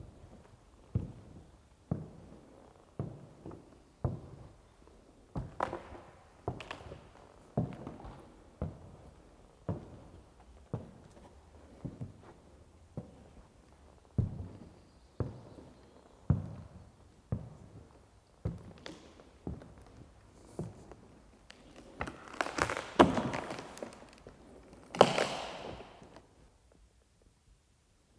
The wooden floors in Vogelsang's sports hall crack underfoot. But outside trees now grow unhindered throughout this abandoned Soviet military base, now a nature reserve. It is a 2km walk from the station or nearest road. One is free to explore the derelict buildings, which are open to wind and weather. It is an atmospheric place that surprises with unexpected details like colourful murals and attractive wallpapers in decaying rooms. There is a onetime theater and a sports hall with ancient heating pipes dangling down the walls. Lenin still stands carved out in stone. Forest wildlife is abundant and springtime birds a joy to hear.